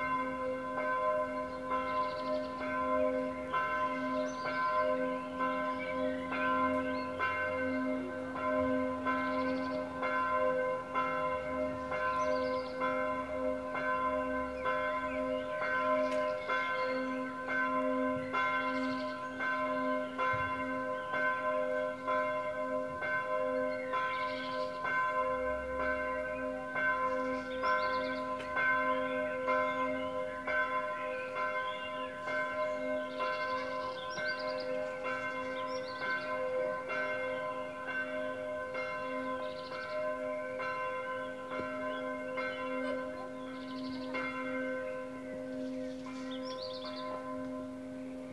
Niedertiefenbach - church bells and village ambience
church bell at 8pm, village sounds
(Sony PCM D50)
3 July, 20:05